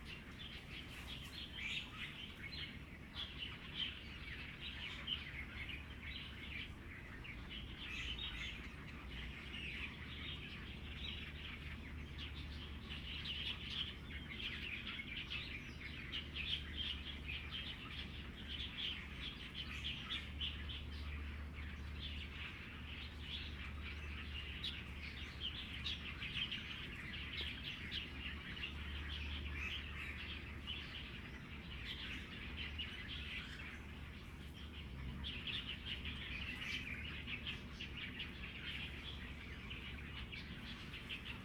Sec., Shanxi Rd., Taitung City - Birdsong
Birdsong, Traffic Sound, The weather is very hot
Zoom H2n MS +XY
Taitung County, Taiwan, 9 September 2014, 10:10